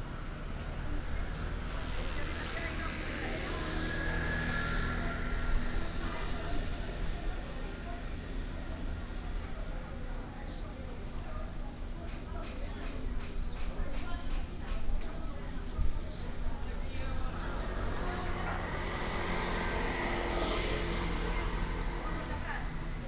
Huaide St., Nantun Dist., Taichung City - Old community
Bird call, Outside the market building, Traffic sound, Old community, Binaural recordings, Sony PCM D100+ Soundman OKM II
Nantun District, Taichung City, Taiwan, 24 September 2017, 10:38